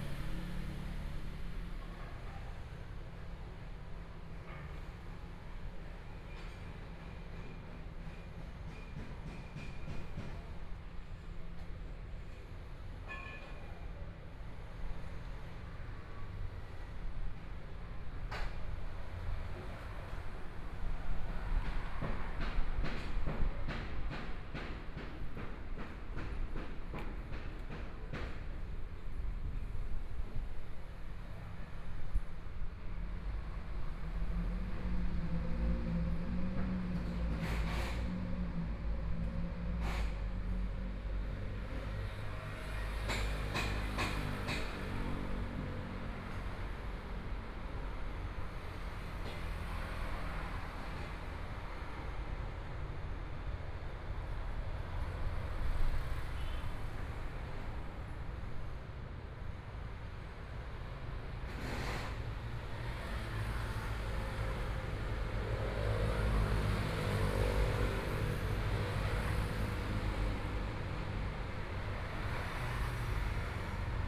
In the corner of the street, Traffic Sound, Construction site noise, Binaural recordings, Zoom H4n+ Soundman OKM II
8 February, 13:32